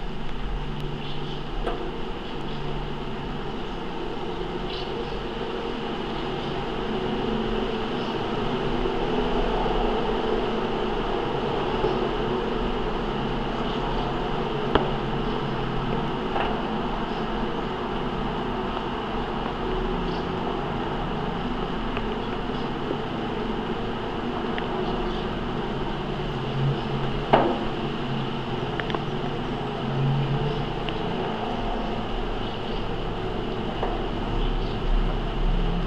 Raseiniai, Lithuania, sculpture
contact microphones on a metallic sculpture. raining.